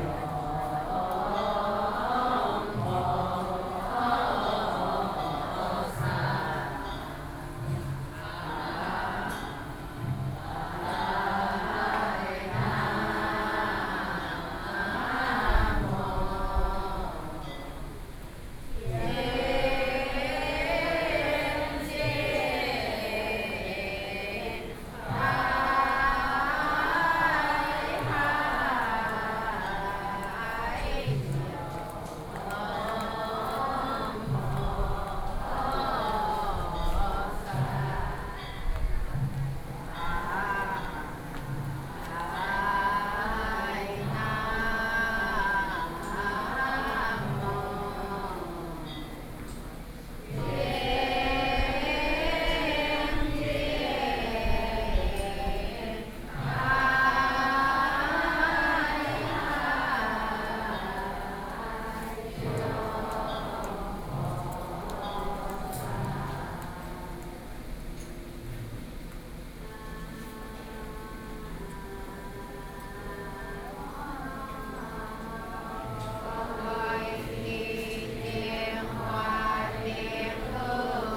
Mengjia Longshan Temple, Taipei City - chanting
walking around in the Temple, hundreds of old woman are sitting in the temple chanting together, Sony PCM D50 + Soundman OKM II
萬華區 (Wanhua District), 台北市 (Taipei City), 中華民國, 25 May, 09:03